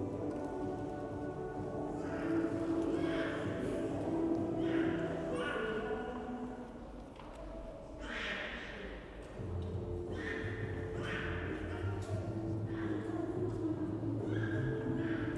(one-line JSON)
{"title": "Anderlecht, Belgium - Underground in Jacque Brel metro station; music, child, train", "date": "2016-10-15 15:51:00", "description": "Brussels Metro stations play music (usually very bland). It's a unique characteristic of the system. It's always there, although often not easy to hear when drowned out by trains, people and escalator noise. But when they all stop it is quietly clear.", "latitude": "50.84", "longitude": "4.32", "altitude": "37", "timezone": "Europe/Brussels"}